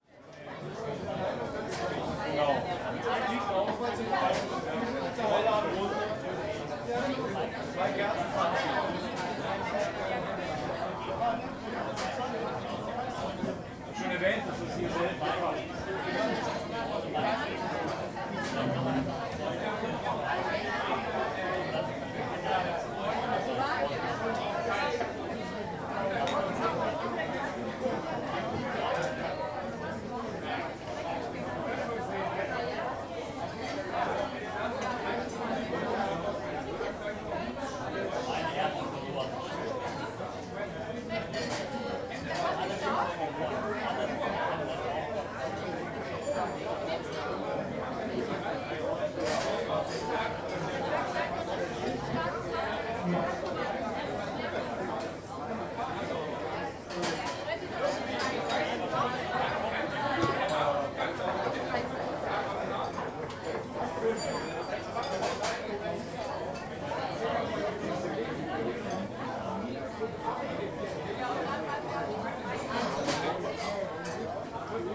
Köln, Zülpicher Str. - Oma Kleinmann
famous again for their schnitzels. retaurant ambient, before dinner.
Köln, Deutschland, 19 May 2010